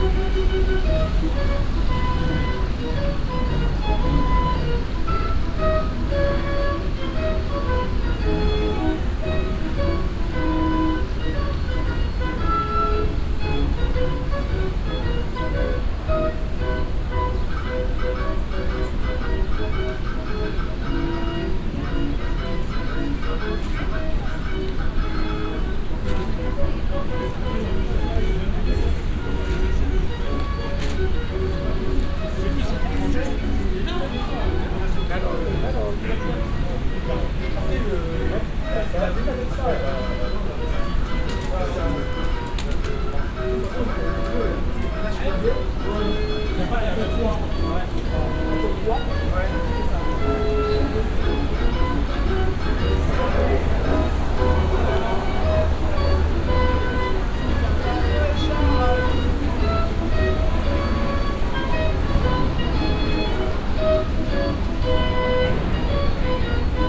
paris, les halles, passage mondetour, traditional caroussel
a traditional wooden caroussel waiting for customers in the morning
international cityscapes - social ambiences and topographic field recordings
Paris, France